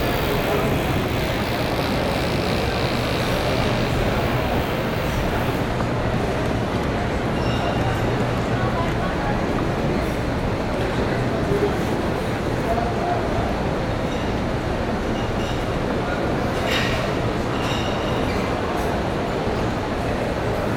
Sao Paulo, Mercado Municipal, restaurants upstairs